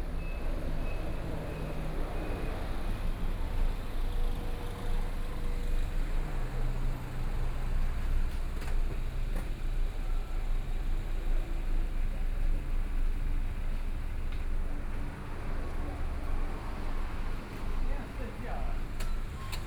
樂合里, Yuli Township - In front of the convenience store
In front of the convenience store, Tourists, Traffic Sound